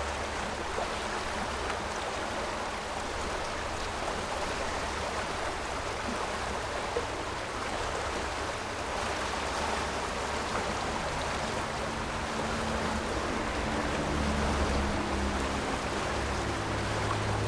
中正橋, Baisha Township - in the Bridge
in the Bridge, Sound wave, Traffic Sound, The sound of the sea through the deck below trend
Zoom H6+Rode NT4 SoundMap20141022-43)
Baisha Township, Penghu County, Taiwan, 22 October